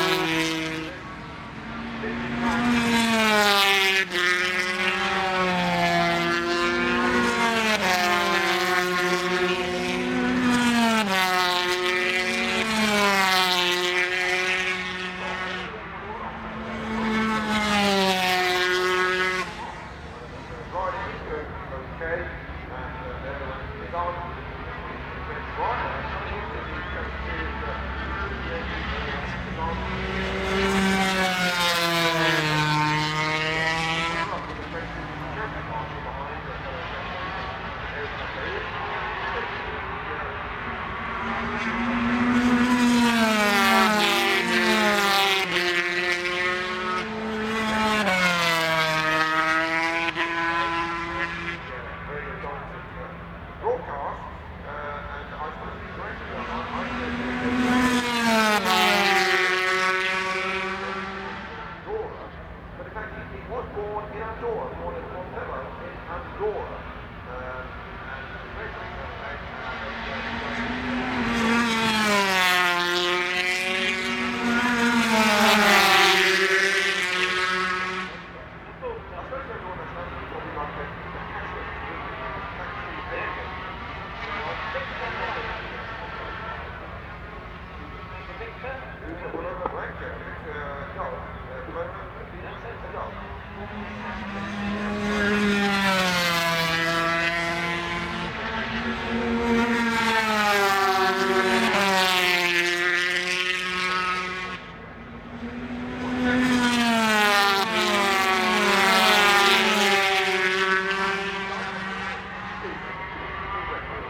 Castle Donington, UK - British Motorcycle Grand Prix 2003 ... 250 ...
250cc warm up ... Starkeys ... Donington Park ... warm up and associated sounds ... Sony ECM 959 one point stereo mic to Sony Minidisk ...
Derby, UK, 13 July 2003, ~10:00